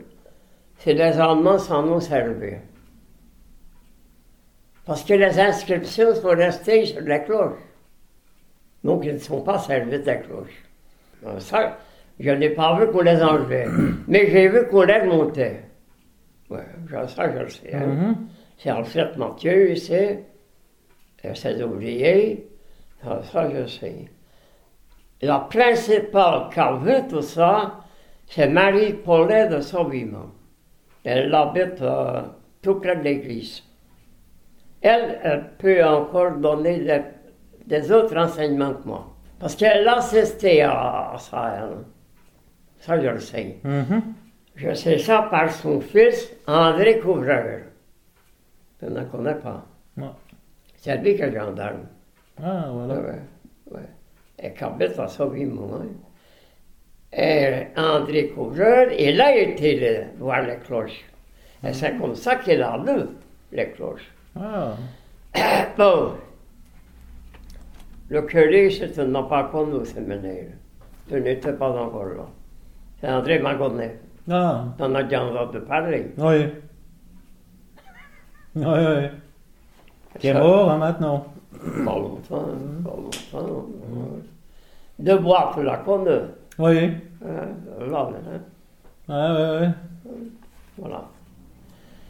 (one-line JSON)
{"title": "Floreffe, Belgique - Old man memories", "date": "2010-12-14 16:00:00", "description": "An old man memories : Florimond Marchal. He tells a friend, Bernard Sebille, his old remembrances about the local bells. This old kind guy lost his set of false teeth, it was hard for him to talk. He went to paradise on 2011, sebtember 3.", "latitude": "50.44", "longitude": "4.76", "altitude": "87", "timezone": "Europe/Brussels"}